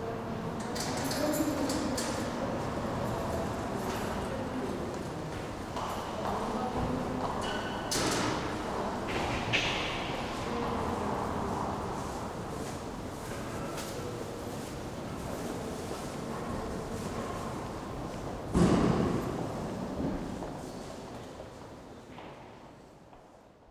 Poštovská, Brno-střed-Brno-město, Česko - Alfa Passage
Recorded on Zoom H4n + Rode NTG, 26.10.2015.
26 October 2015, 16:00